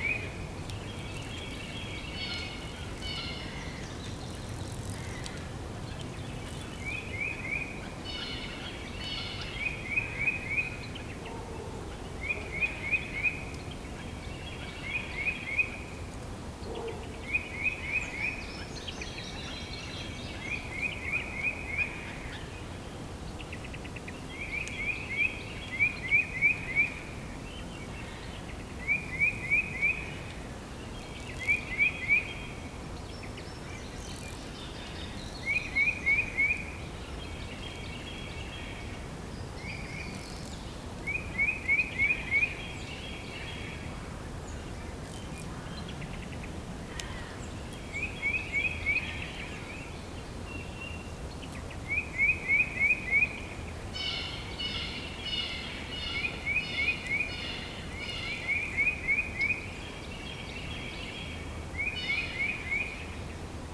A small forest during the spring in Missouri. Some birds are singing. Sound recorded by a MS setup Schoeps CCM41+CCM8 Sound Devices 788T recorder with CL8 MS is encoded in STEREO Left-Right recorded in may 2013 in Missouri, USA.